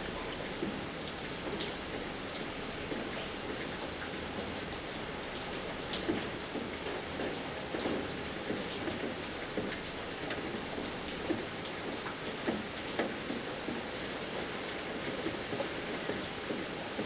介達國小, 金峰鄉正興村 - Rain
Rain
Zoom H2n MS+XY
Taitung County, 東64鄉道, 28 March 2018, ~19:00